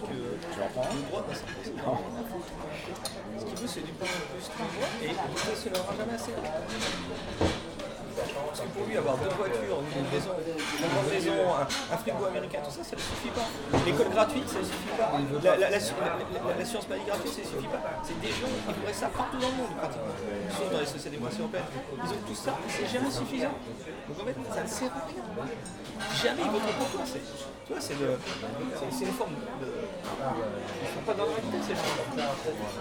Paris, France - Paris restaurant
Into a Paris restaurant, hubbub of the clients and two people talking about the actual french political problems.